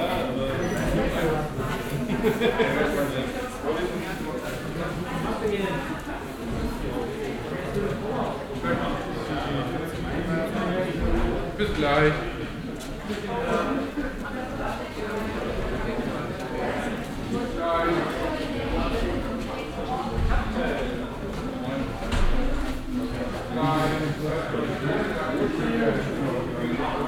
Im Hörsaal Zentrum der Universität.
Der Klang von Studentenstimmen, die im Vorraum der Hörsäle auf die Vorlesungen warten.
Inside the auditorium center of the university.
Projekt - Stadtklang//: Hörorte - topographic field recordings and social ambiences